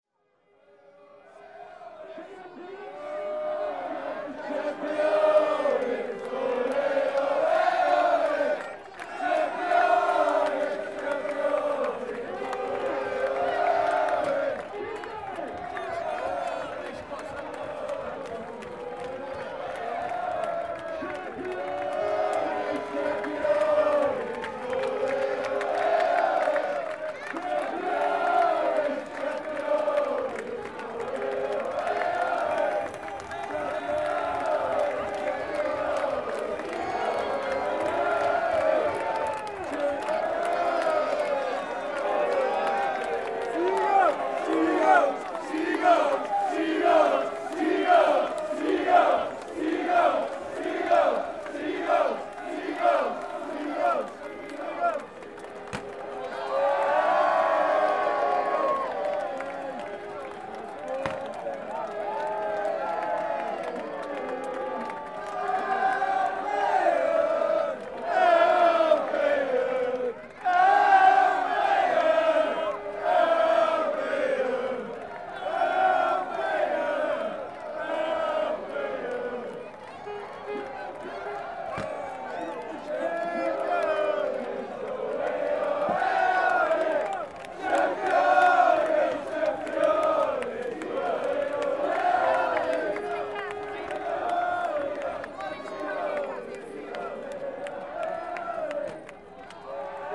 Madeira Drive, Brighton - Brighton and Hove Albion Football Fans
The end of the victory parade celebrating Brighton and Hove Albion wining the English League 1. Fans chanting and singing.
South East England, England, United Kingdom, 8 May 2011, 06:45